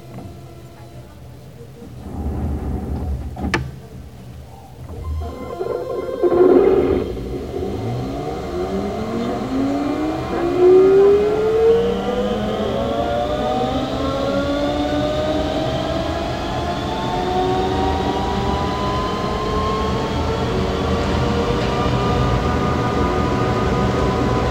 Allées Jean Jaurès, Toulouse, France - in the metro
in the metro
captation : C411 PP AKG Vibration Pickup on the Window / Zoom H4n